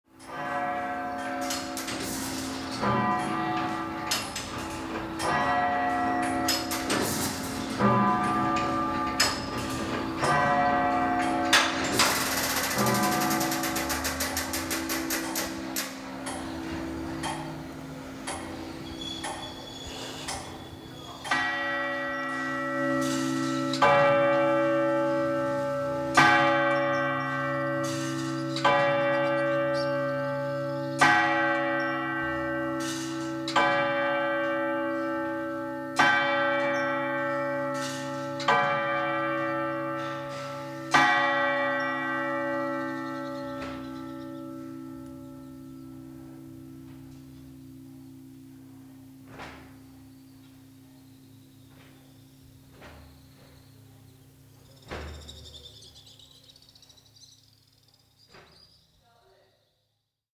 Dubrovnik, city tower bells - counting hours
recorded at the top of the tower, near the winding up mechanism